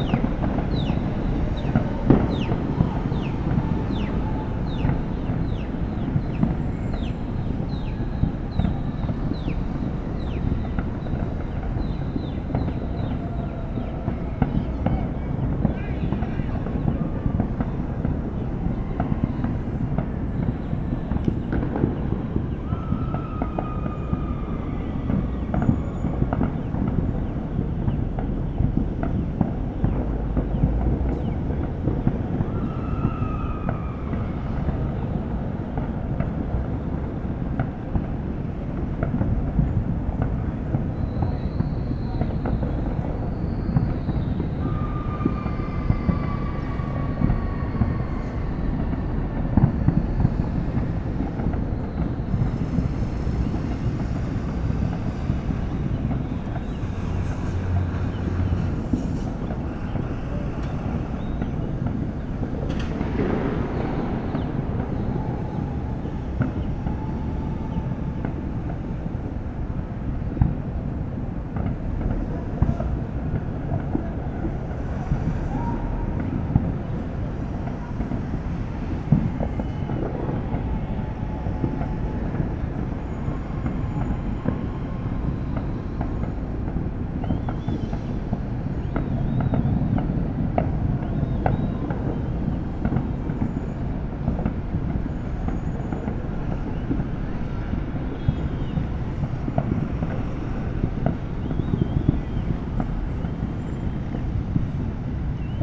JK Building, Belo Horizonte - Happy New Year 2020 in Belo Horizonte (Brazil)
Some fireworks and screaming for New Year 2020.
Recording from the 14th floor in the center of Belo Horizonte(Brazil), JK building.
Recorded by a AB Setup B&K4006
Sound Devices 833
Sound Ref: AB BR-191231T01
GPS: -19.923656, -43.945767
Recorded at midnight on 31st of December 2019
Região Sudeste, Brasil